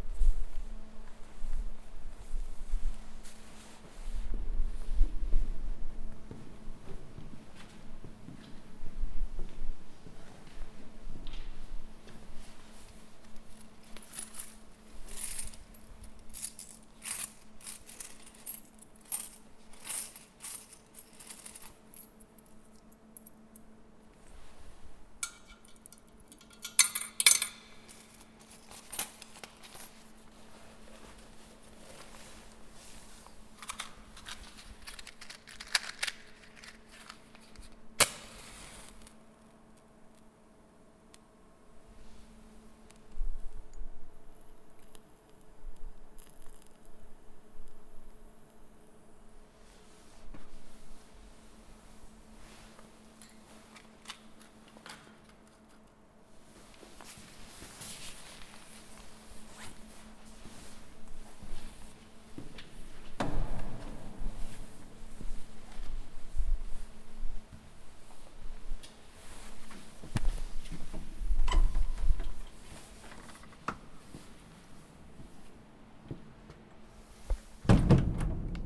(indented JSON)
{
  "title": "San Abbondio, Kirche im Innenraum",
  "date": "2010-12-25 12:50:00",
  "description": "San Abbondio, Aussicht auf Lago Maggiore, Tessinerdorf, Kirche, schönster Kirchplatz über dem See",
  "latitude": "46.11",
  "longitude": "8.77",
  "altitude": "319",
  "timezone": "Europe/Zurich"
}